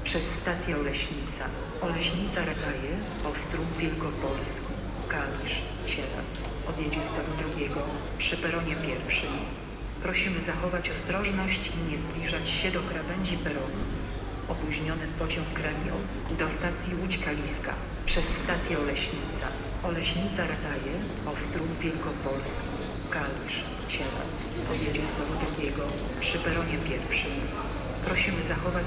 Railway Station, Wrocław, Poland - (63) Stations annoucements
Station's announcements - underground.
binaural recording with Soundman OKM + Sony D100
sound posted by Katarzyna Trzeciak